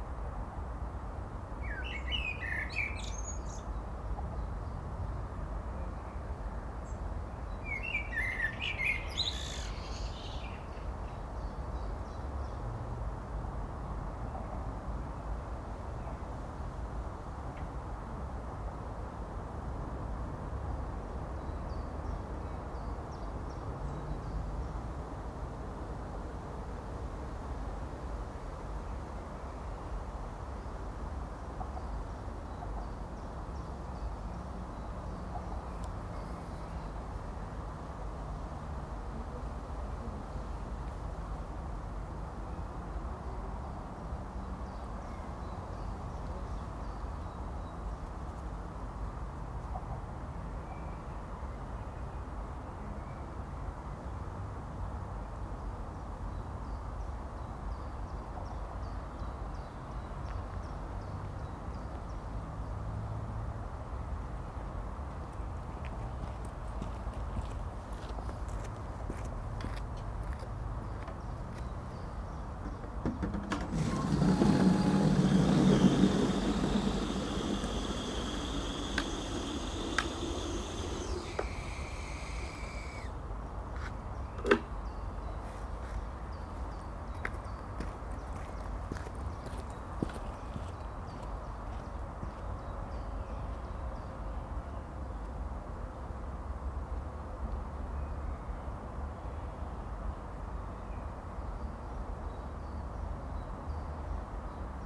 Friedhof Grunewald, Bornstedter Straße, Berlin, Germany - Grunewald cemetery - blackbird and watering can
Sunday midday atmosphere. Fine sunny weather. A blackbird sings and a man looking after the graves fills a plastic watering can. Trains pass.
15 June